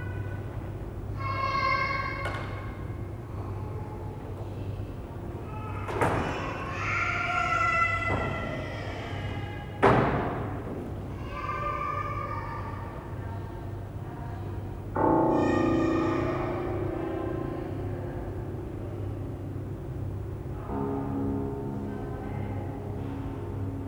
Atmospheric Cultural Centre
Wandering in the corridors of the centre the sounds of dancing and children facepainting come from behind doors and round corners. The piano is in a darkened wood panelled concert hall empty except for us.
16 June 2008, ~05:00